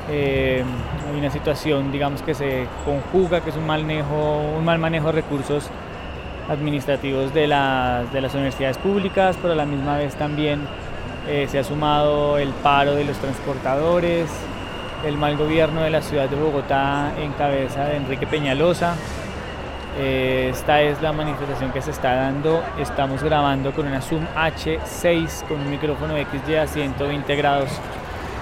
Cl., Bogotá, Colombia - Manifestación social Contra Enrique Peñalosa
En Bogotá la ciudad se moviliza en contra del gobierno de Enrique Peñalosa, la situación de crisis de las universidades públicas, la deficiencia del sistema de transporte.
Zoom H6, Mic XY - 120 Grados